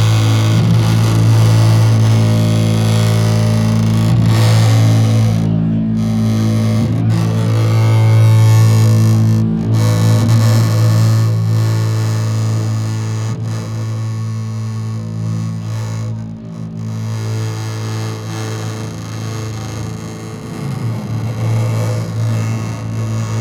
window resonating
heavy window resonance
10 September 2011, 15:12